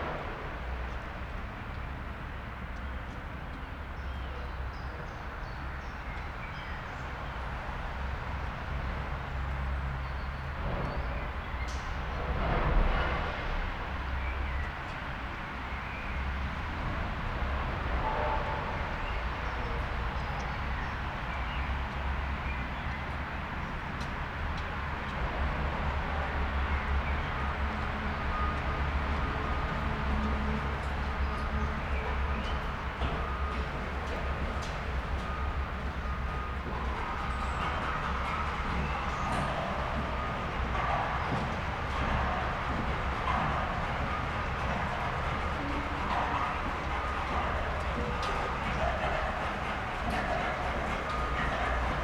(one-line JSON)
{"title": "Poznan, Ogrody district, near Rusalka lake - underpass", "date": "2014-03-29 15:34:00", "description": "recording in an underpass commonly used by strollers and runners on their way to Rusalka lake. conversations, tick-tocks of bike gears, dog puffing, excavator working in the distance. nice, lush reverb.", "latitude": "52.42", "longitude": "16.89", "altitude": "75", "timezone": "Europe/Warsaw"}